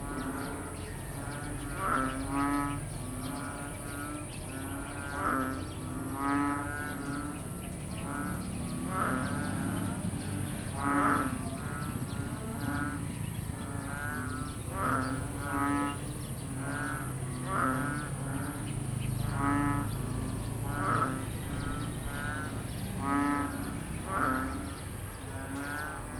Some day after heavy rain, there is less activity of the frogs in the neighbouring fields, still accompanied by motor sounds from the nearby Halang Rd with tricycles, cars and motorcycles. Palakang bukid is the filipino name of this frog.
Laguna, Philippines, 17 July